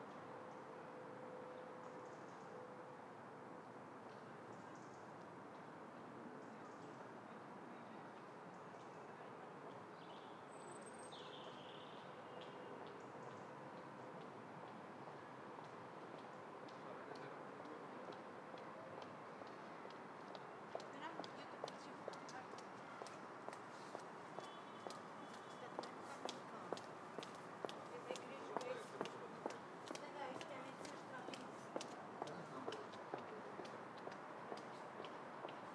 Parkhurst Road, London - Out on the Street
Late afternoon recording, sun is setting. Standing awkwardly on the street with a recorder + microphone. Shotgun mic, blimp. People seemed to be avoiding me when they sit my equipment by crossing the street.